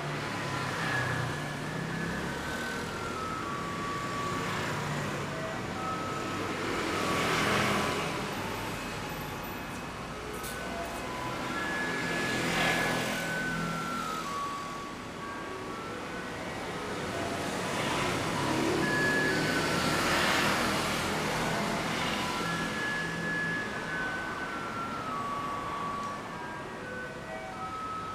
No., Zhongzheng West Road, Zhubei City, Hsinchu County, Taiwan - Garbage Truck Outside Claw-Machine Arcade
A garbage truck approaches and stops at the curb of the yellow claw-machine arcade, next door to Simple Mart on Zhongzheng West Rd. The truck produces the near-deafening melody, to alert local residents of its arrival. The truck's compactor is also activated. Stereo mics (Audiotalaia-Primo ECM 172), recorded via Olympus LS-10.
新竹縣, 臺灣, 29 July 2019